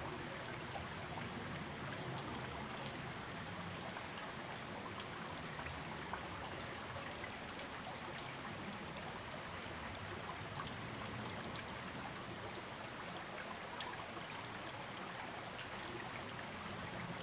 種瓜坑溪, 成功里 Puli Township - Small streams
Brook, In the river, Small streams
Zoom H2n MS+XY